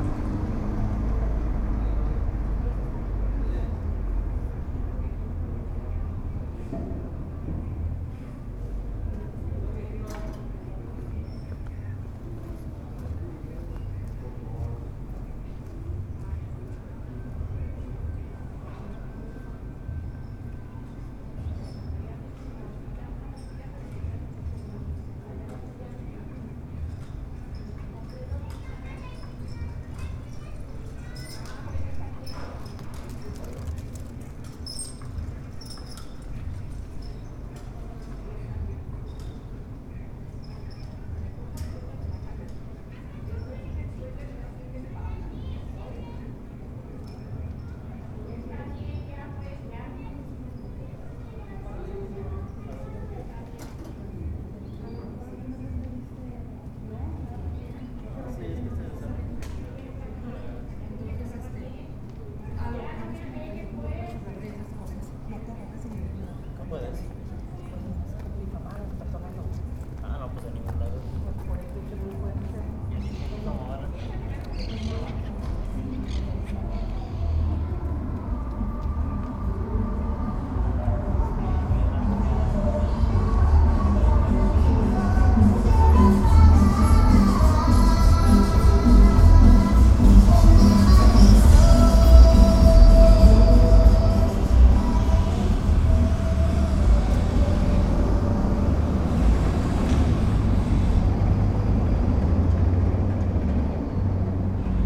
Alfaro, Obregon, León, Gto., Mexico - Pequeña plaza en la colonia Obregón.
Small plaza in neighborhood Obregon.
I made this recording on February 15, 2020, at 2:27 p.m.
I used a Tascam DR-05X with its built-in microphones and a Tascam WS-11 windshield.
Original Recording:
Type: Stereo
Pequeña plaza en la colonia Obregón.
Esta grabación la hice el 15 de febrero 2020 a las 14:27 horas.